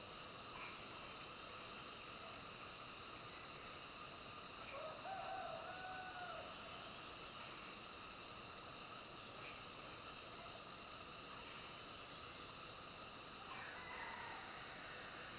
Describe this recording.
Frogs chirping, Early morning, Crowing sounds, Zoom H2n MS+XY